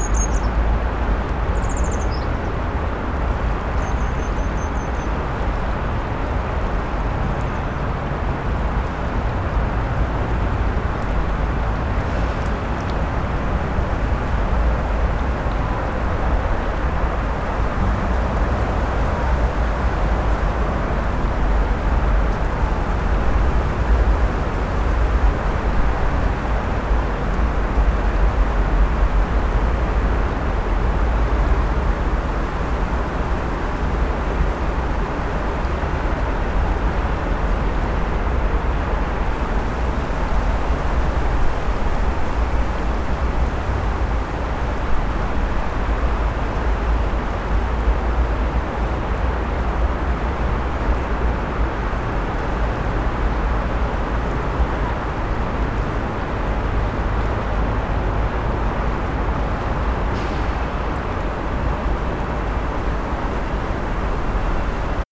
Innsbruck, Waltherpark am Inn Österreich - Frühling am Inn
waltherpark, vogelweide, fm vogel, bird lab mapping waltherpark realities experiment III, soundscapes, wiese, parkfeelin, tyrol, austria, walther, park, vogel, weide, fluss, vogel, wasser, inn, wind in blättern, flussgeräuschanpruggen, st.
Innsbruck, Austria, 12 March